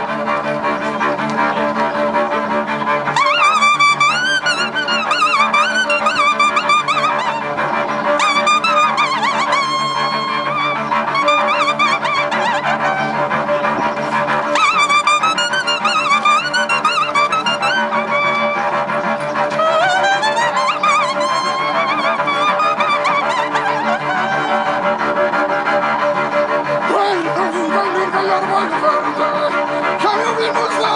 Konstanz, Germany - Awesome Cello Guy - Konstanz
June 2012 Street performer on water front.
Kreuzlingen, Switzerland, 17 June 2012